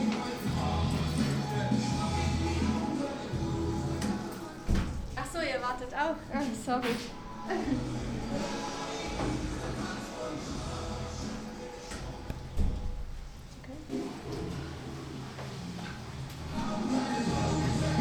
Schwäbisch Gmünd, Deutschland - toilet noise
Schwäbisch Gmünd, Germany, 12 May 2014, 02:07